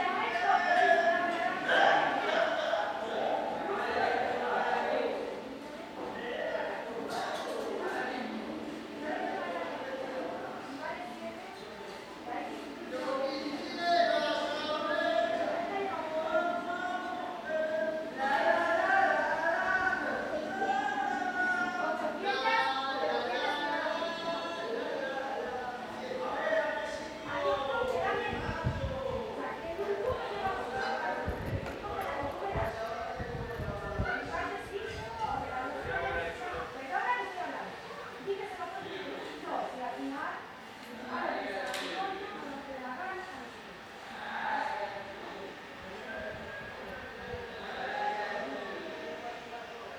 Madrid, Spain - Madrid courtyard arguments
Cheap hotel in Madrid, arguments between woman and husband, singing...
sony MS microphone. Dat recorder